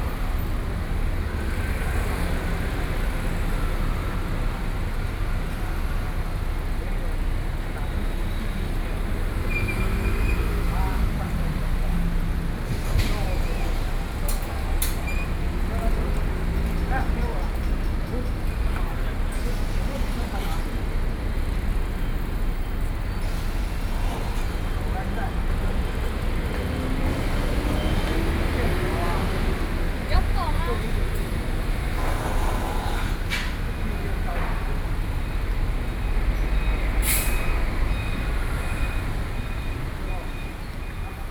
Zhongli, Taiwan - Square in front of the station
Square in front of the station, Sony PCM D50 + Soundman OKM II